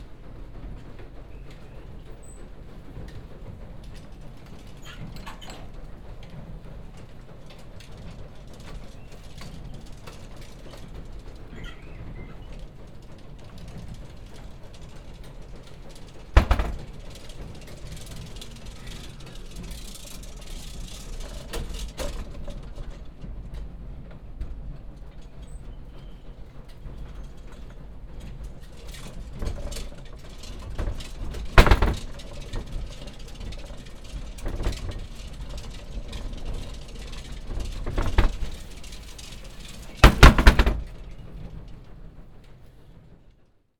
enscherange, rackesmillen, flour bag
At the roof floor of the historical mill. The sound of a construction that lifts up the flour bag from the streetz ground with a metal chain through a wooden floor door.
Enscherange, Rackesmillen, Mehlsack
Im Dachgeschoss der historischen Mühle. Die Klänge einer Konstruktion, die mittels einer Eisenkette en Mehlsack von der Straße durch eine sich öffnende und schließende Holzluke hinaufzieht.
À l’étage sous le toit dans le moulin historique. Le bruit du mécanisme qui soulève le sac de farine du niveau inférieur avec une chaine en métal à travers une trappe dans le plancher en bois.